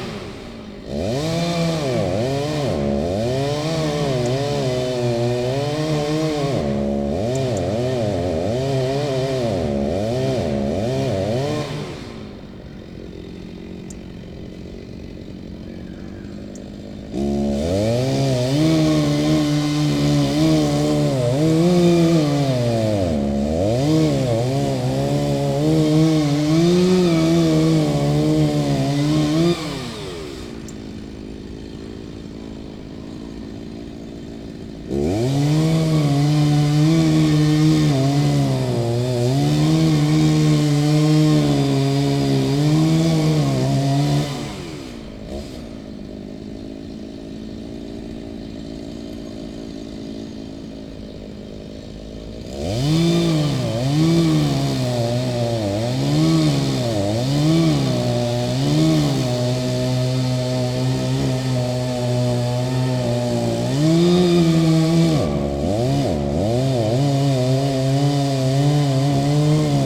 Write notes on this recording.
wood cutting with a chainsaw, the city, the country & me: august 3, 2011